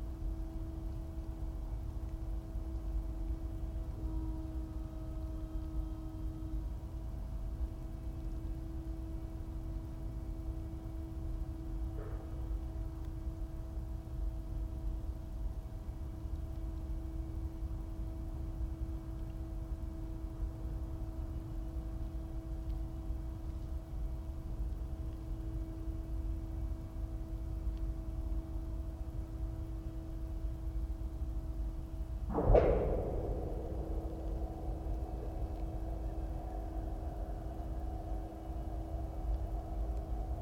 Wind farm: a rotating humming generator in the green environment, cycles of birds, weather, distance; audio stream, Bernau bei Berlin, Germany - The becalmed drone continues with twangs and owls
There is light rustling in the leaves very close to the mics. Again unknown - maybe mice.